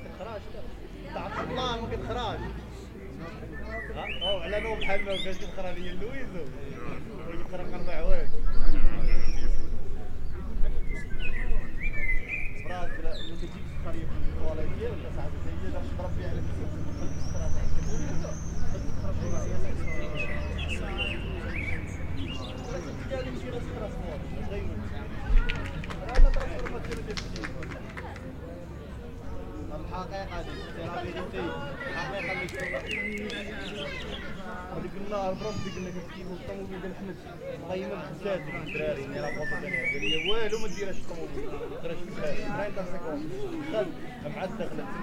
Perugia, Italia - guys chilling on the green grass
guys speaking, birds, traffic
[XY: smk-h8k -> fr2le]